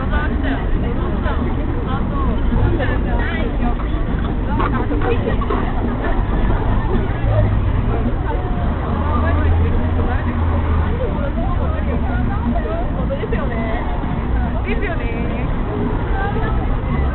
girls at harajuku rec by I. Hoffmann